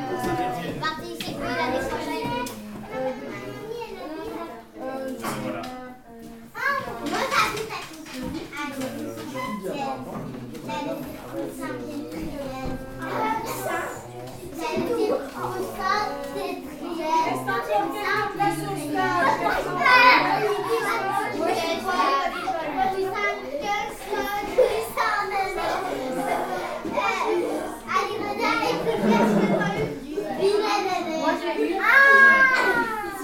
{
  "title": "Court-St.-Étienne, Belgique - The nursery",
  "date": "2015-05-09 17:30:00",
  "description": "A nursery, inside the Sart school. It looks like dissipated, but children are really working !",
  "latitude": "50.62",
  "longitude": "4.56",
  "altitude": "98",
  "timezone": "Europe/Brussels"
}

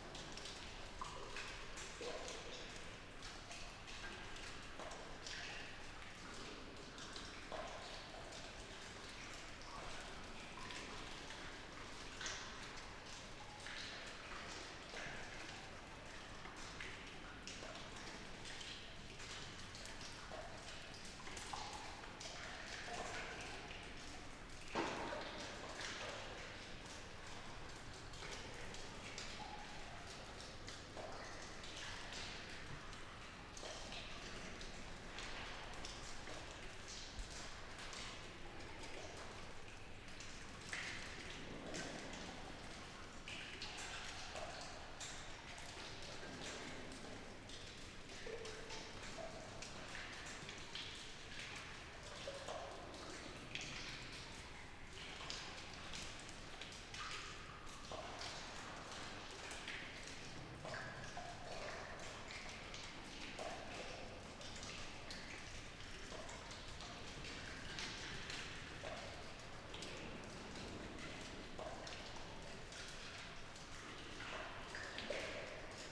{
  "title": "La Coupole Intérieur Helfaut, France - Gouttes d'eau dans galerie",
  "date": "2022-02-16 16:22:00",
  "description": "Intérieur de galerie de ce La Coupole, gouttes d'eau et ruissellement dans ces galeries de craie calcaire, à l'acoustique tout à fait particulière. Ces galléries sont pour certaine pas entièrement \"coffrées de béton\" à la fin de la seconde guerre mondiale.\nOriginal recording, sd mix pré6II avec 2xDPA4021 dans Cinela Albert ORTF",
  "latitude": "50.71",
  "longitude": "2.24",
  "altitude": "47",
  "timezone": "Europe/Paris"
}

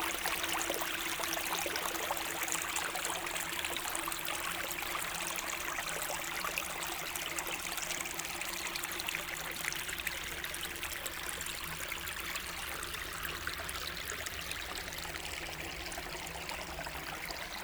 {
  "title": "Nogent-le-Roi, France - Vacheresses small stream",
  "date": "2017-12-26 17:45:00",
  "description": "The very small stream called Ruisseau de Vacheresses-Les-Basses, a bucolic place in the center of a small village.",
  "latitude": "48.62",
  "longitude": "1.53",
  "altitude": "100",
  "timezone": "Europe/Paris"
}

